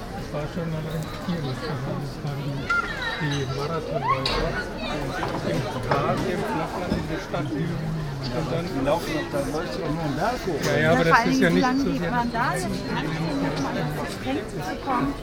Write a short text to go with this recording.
vernissagepublikum auf der altitude 08 der Kunsthochschule für Medien (KHM), soundmap nrw: social ambiences/ listen to the people - in & outdoor nearfield recordings